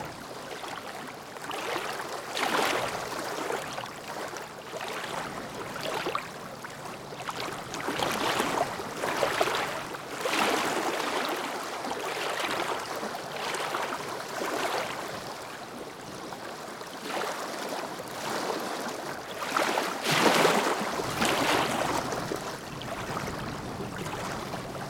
Lac Butgenbach, Belgique - Small waves on the lake
Windy snowy day.
Tech Note : Sony PCM-D100 internal microphones, wide position.
Wallonie, België / Belgique / Belgien, 5 January 2022